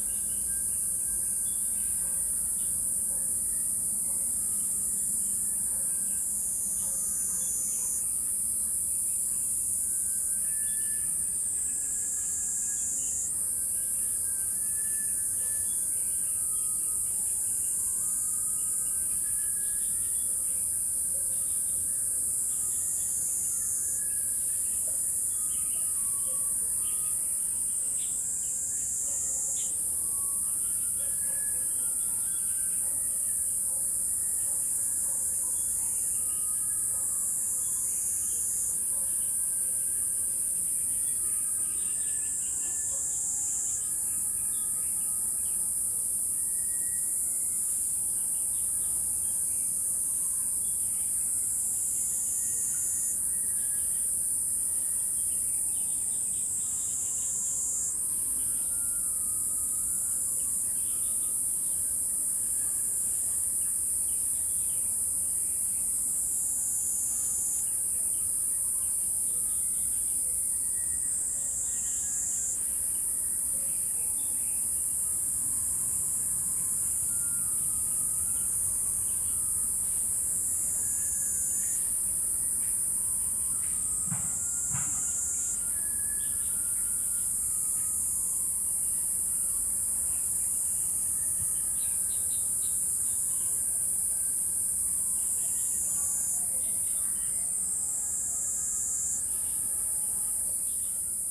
in the Park, Birds and insects
Zoom H2n MS+XY
Hualien County, Taiwan, August 2014